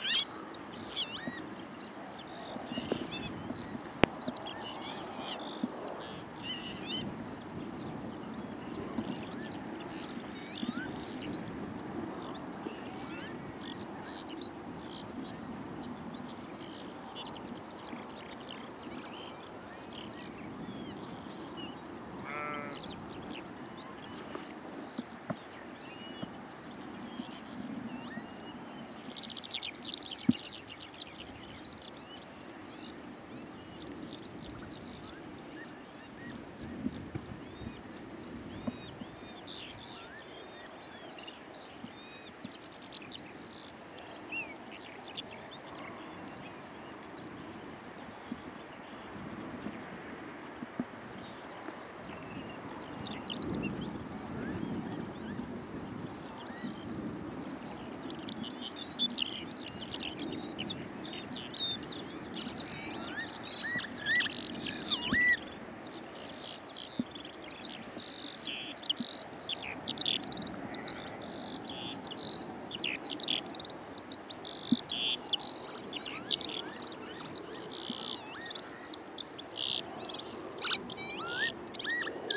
Kirkby Stephen, UK - Lapwings and Skylarks
Sunny mid-May afternoon on Smardale Fell. Handheld Telinga into SD MixPre 10T.
North West England, England, United Kingdom, 18 May, ~16:00